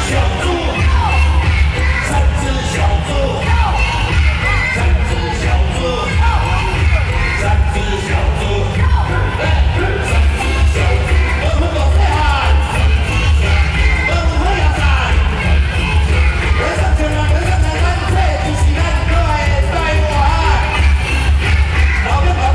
Ketagalan Boulevard, Occasions on Election-related Activities, Rode NT4+Zoom H4n